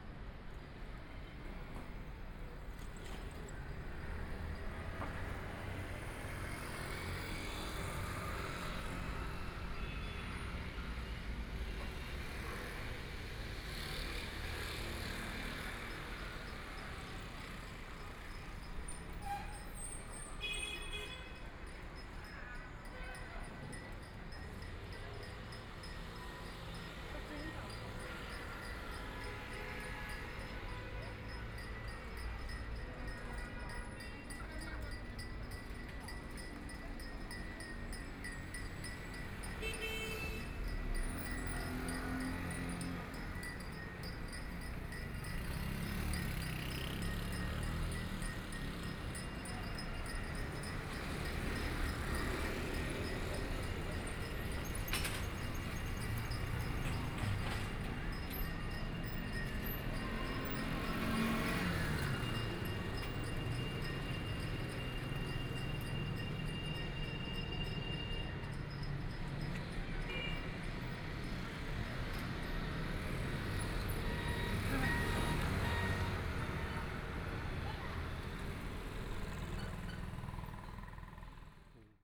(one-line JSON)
{"title": "Sichuan Road, Shanghai - in the Street", "date": "2013-12-02 11:17:00", "description": "Traffic Sound, Old small streets, Narrow channel, Binaural recordings, Zoom H6+ Soundman OKM II", "latitude": "31.24", "longitude": "121.48", "altitude": "23", "timezone": "Asia/Shanghai"}